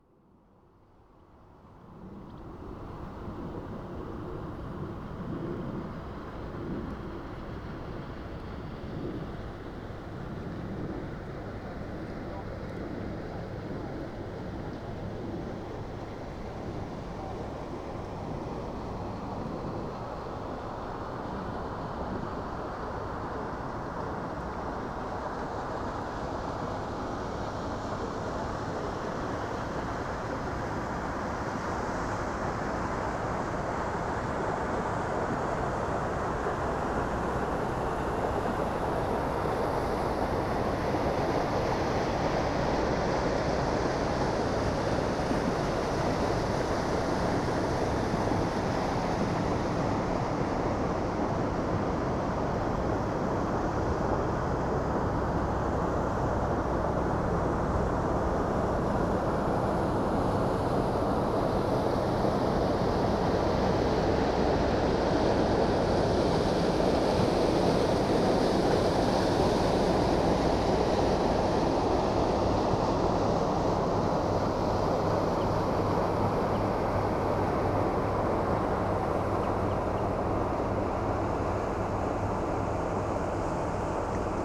Neckarwestheim, Deutschland - LEHAR
Frachtschiff LEHAR auf dem Neckar - Talwärts
PCM-D50